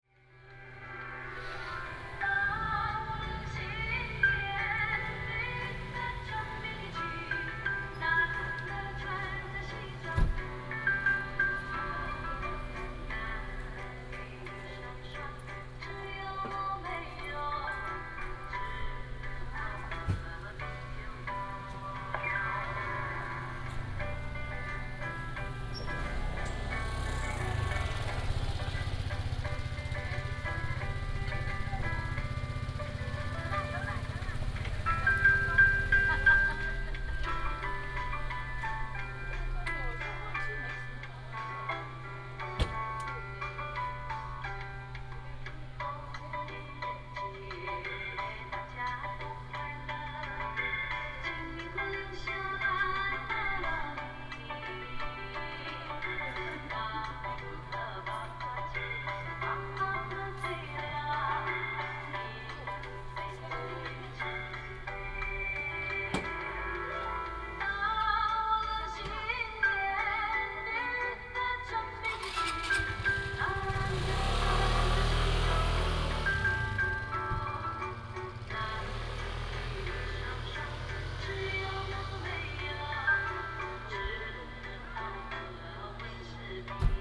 牡丹鄉199縣道, Pingtung County - At the intersection
At the intersection, Vendor carts selling ingredients, Traffic sound
Pingtung County, Mudan Township, 199縣道199號, 2 April 2018